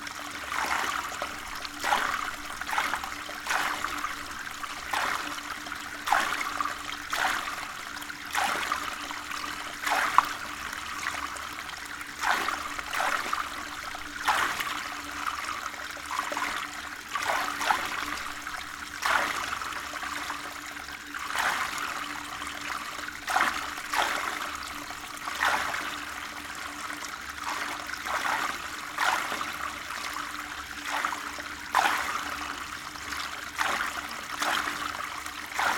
Povoa Das Leiras, Canalisation - Canalisation Povoa Das Leiras
Portugal